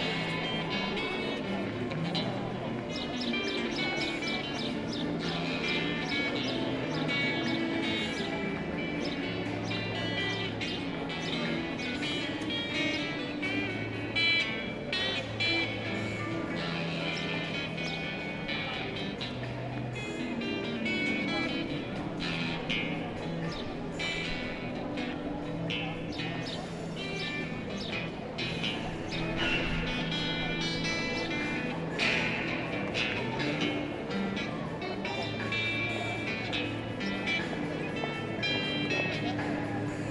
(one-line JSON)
{"title": "Moscow, Arbat - People Traffic, Musicians", "date": "2010-06-03 20:30:00", "description": "People, Musician, Street Vendors", "latitude": "55.75", "longitude": "37.59", "altitude": "146", "timezone": "Europe/Moscow"}